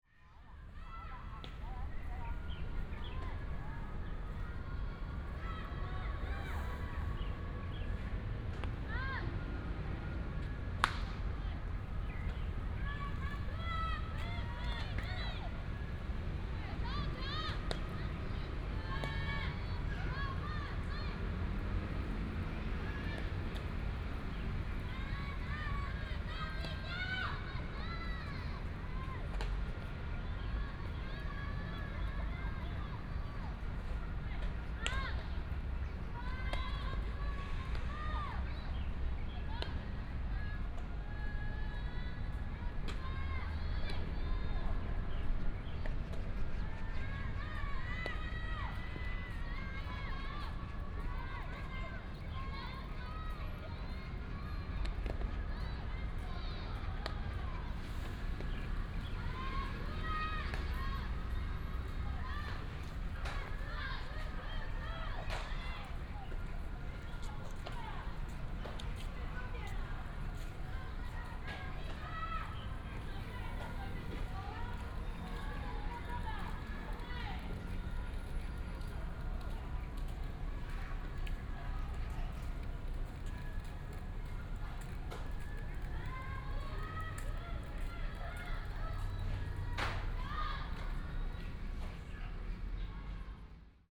Qingnian Park, Wanhua Dist., Taipei City - walking in the Park
in the Park, Primary school students are practicing softball, traffic sound